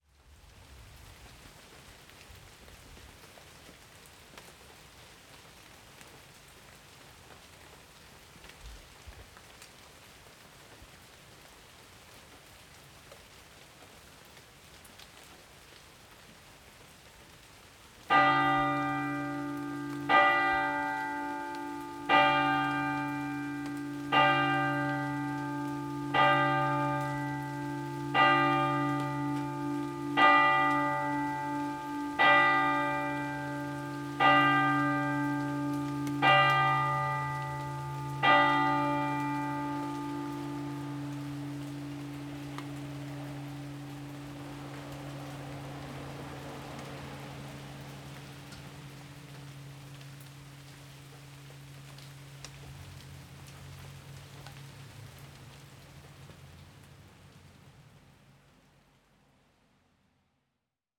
Münsing, Deutschland - Münsing - rain and church bell at night
Münsing - rain and church bell. [I used the Hi-MD recorder Sony MZ-NH900 with external microphone Beyerdynamic MCE 82]
Münsing, Germany, 14 May